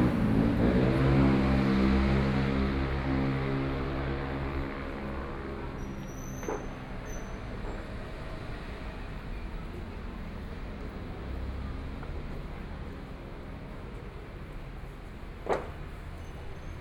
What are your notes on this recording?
Walking on the road, Traffic Sound, Construction noise, Binaural recordings